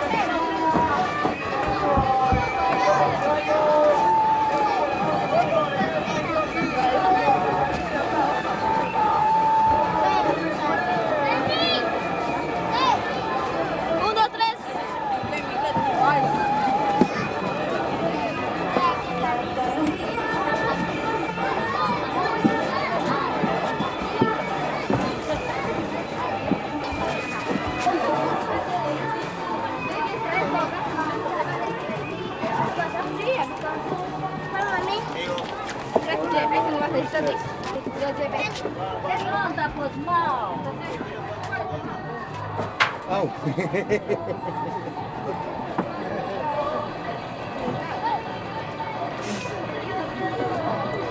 Unnamed Road, Tamshiyacu, Peru - river side market noise Tamshiyacu
river side market noise Tamshiyacu